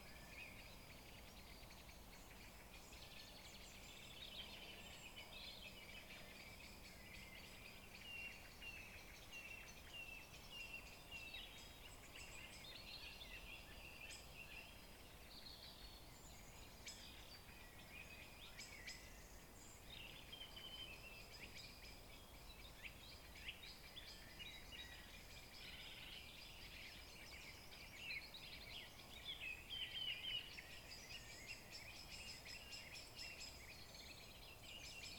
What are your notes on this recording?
This recording was made using a Zoom H4N. The recorder was positioned on the path at the top of the valley of one of the core rewilding sites of Devon Wildland. This recording is part of a series of recordings that will be taken across the landscape, Devon Wildland, to highlight the soundscape that wildlife experience and highlight any potential soundscape barriers that may effect connectivity for wildlife.